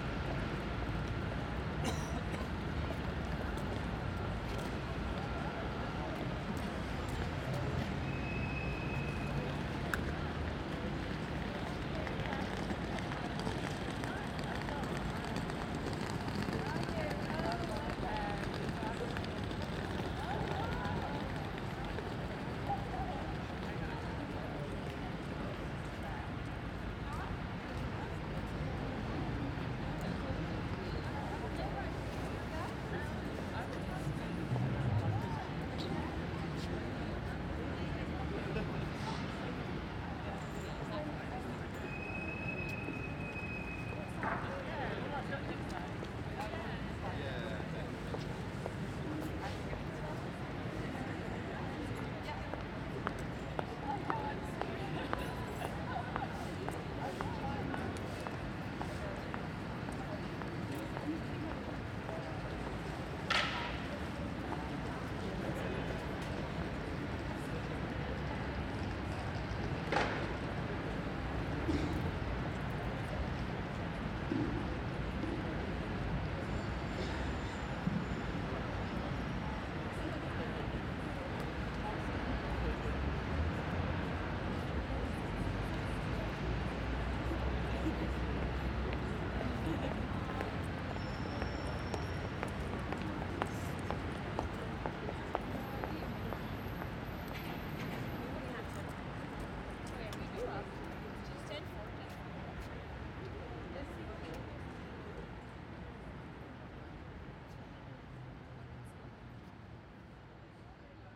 British Library, Greater London, Vereinigtes Königreich - British Library, London - Venue ambience a few minutes before the 'In the Field' symposium
British Library, London - Venue ambience a few minutes before the 'In the Field' symposium.
Five minutes later 'In the Field' - a symposium 'exploring the art and craft of field recording' - was opened vis-à-vis in the British Library's conference center. Presenters included Ximena Alarcón, Angus Carlyle, Des Coulam, Peter Cusack, Simon Elliott, Felicity Ford, Zoe Irvine, Christina Kubisch, Udo Noll – Radio Aporee, Cheryl Tipp, David Vélez, Chris Watson, and Mark Peter Wright.
[I used a Hi-MD-recorder Sony MZ-NH900 with external microphone Beyerdynamic MCE 82].
London Borough of Camden, UK, February 2013